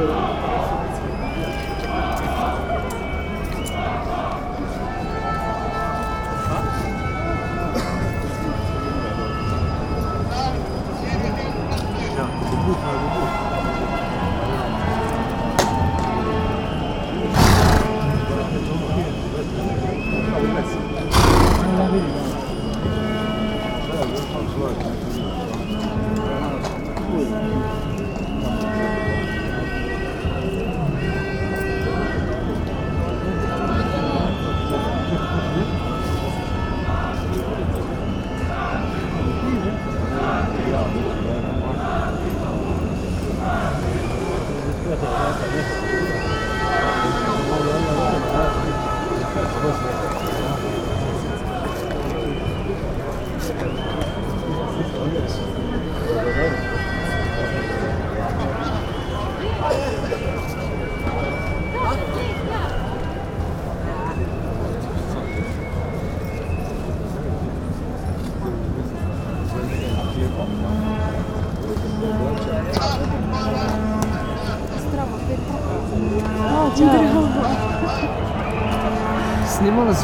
riots, Maribor, Slovenia - people's revolt
demonstrations against corrupted authorities ... police chopper arrived at the end of recording, it is just before tear gas shower, police on horses and on the ground executed violence against people
26 November 2012